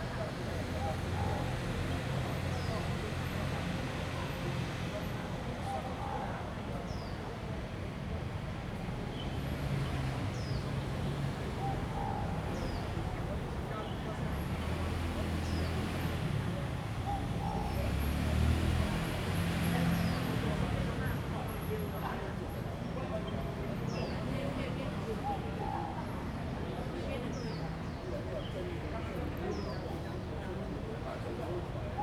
全安公園, 大安區, Taipei City - in the Park
in the Park, After a rain, Traffic Sound, birds sound, The elderly and children
Zoom H2n MS+ XY
Da’an District, Taipei City, Taiwan